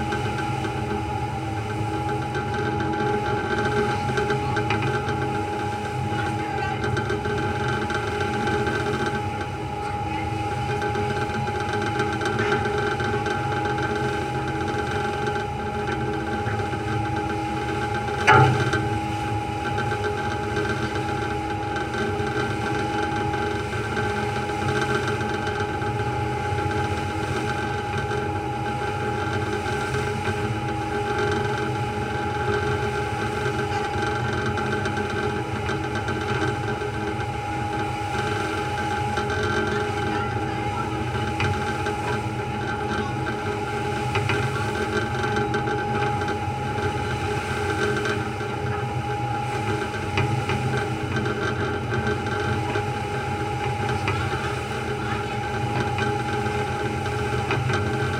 ferry boat railing vibrations, Istanbul
contact microphone placed on the railing of a ferry boat
22 February 2010, 14:43